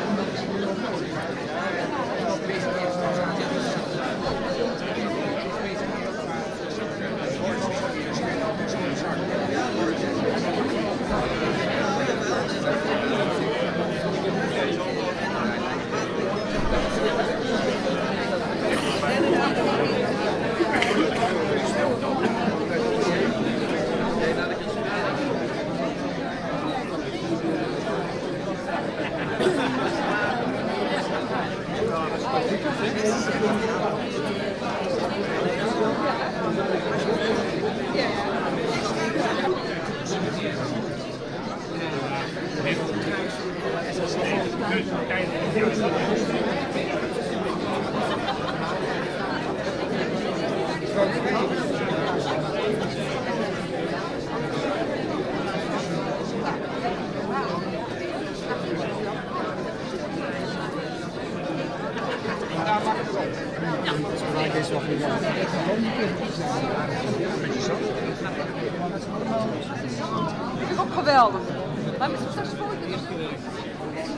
audience in the theatre foyer while intermission of a classic music performance
international soundmap : social ambiences/ listen to the people in & outdoor topographic field recordings
oude markt, theatre, 22 June 2009, 12:13